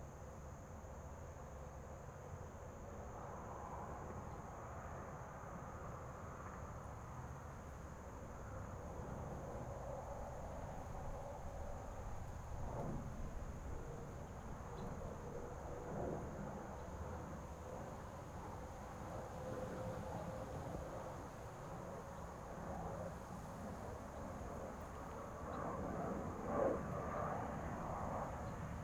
Taitung Forest Park, Taiwan - In the park
Birds singing, Fighter flight traveling through, The distant sound of traffic, Zoom H6 M/S
Taitung County, Taiwan